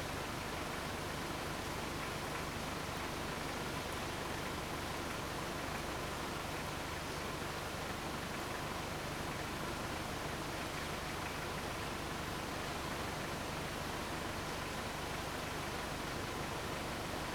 Thunderstorm
Zoom H2n Spatial audio